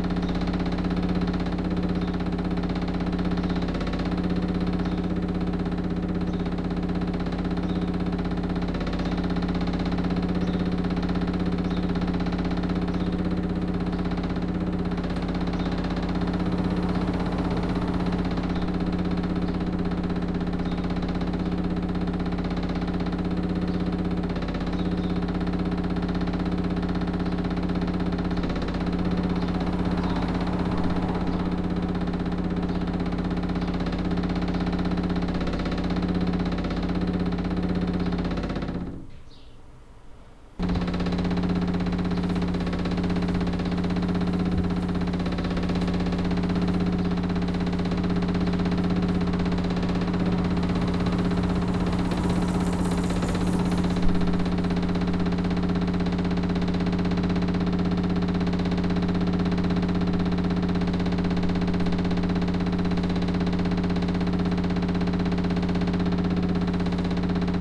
Kalamaja, Tallinn, Estonia - Vibrating window
Kitchen window suddenly started to vibrate by itself..
8 May 2015